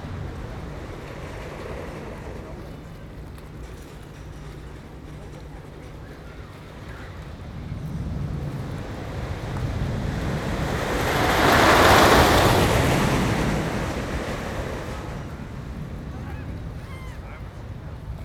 *Binaural recording* Amusement park, waves, seagulls, a roller coaster run with no passengers, distant boat motoring out into the bay, chatters.
CA-14 omnis > DR100 MK2